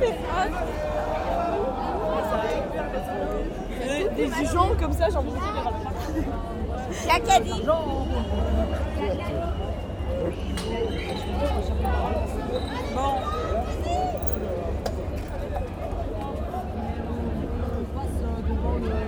Ottignies-Louvain-la-Neuve, Belgique - 24 Hours bikes feast
(en) Each year in Louvain-La-Neuve city happens a festival called the 24-hours-bikes. It’s a cycling race and a parade of folk floats. But above all, this is what is called in Belgian patois a “guindaille”. Quite simply, it's a student’s celebration and really, it’s a gigantic feast. In fact, it’s the biggest drinking establishment after the beer feast in Munich. Forty thousand students meet in aim to feast on the streets of this pedestrian city. It's a gigantic orgy encompassing drunkenness, lust and debauchery. People are pissing from the balconies and at every street corner. There’s abundance of excess. During a walk in these streets gone crazy, this is the sound of the event. It’s more or less an abnormal soundscape.
(fr) Chaque année a lieu à Louvain-La-Neuve une festivité nommée les 24 heures vélo. Il s’agit d’une course cycliste et un défilé de chars folkloriques. Mais surtout, c’est ce qu’on appelle en patois belge une guindaille. Tout simplement, c’est une festivité étudiante.
Ottignies-Louvain-la-Neuve, Belgium